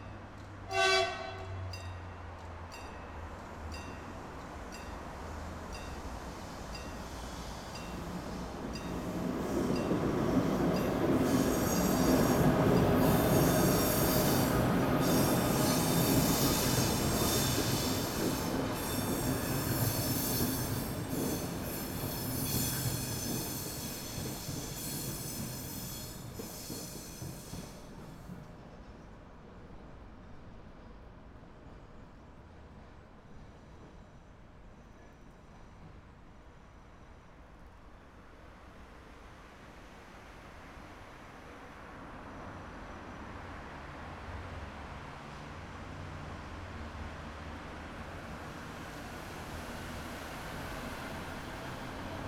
Rijeka, Croatia - train rijeka street